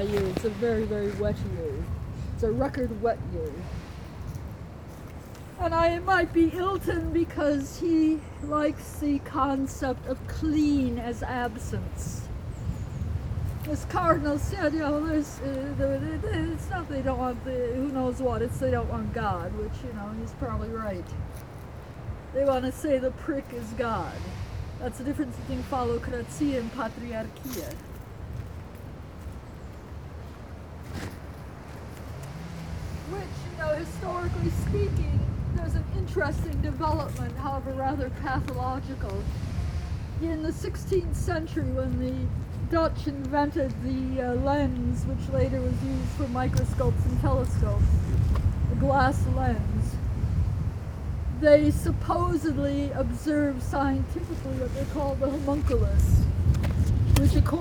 Rome, Clivo di Rocca Savella - lunatic woman
(binaural)
came across a woman living in a makeshift tent in the bushes of this back street. every day she was waling along the road, carrying a bunch of weeds and a stick, out of the blue talking to passer-bys about different concepts. each "listener" heard a different story. she was smoothly changing topics in a blink of an eye as if it was one story. some people were running away scared of her, some were trying to get into the conversation. you could tell she had gone off her rocker yet her words and ideas were coherent and educated although. sometimes very abstract and out of this world. here only a short excerpt.
Rome, Italy